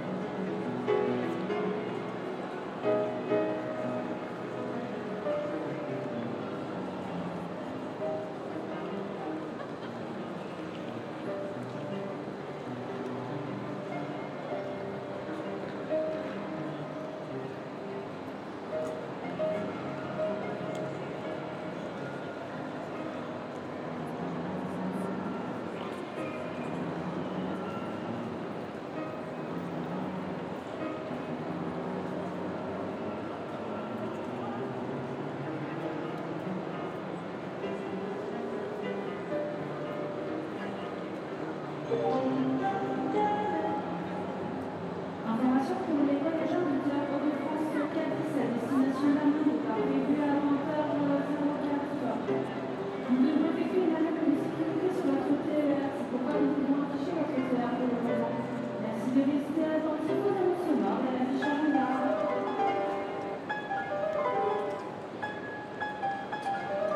Rue de Dunkerque, Paris, France - Gare du Nord - Ambiance musicale
Gare du Nord
Ambiance musicale
Prse de sons : JF CAVRO
ZOOM F3 + AudioTechnica BP 4025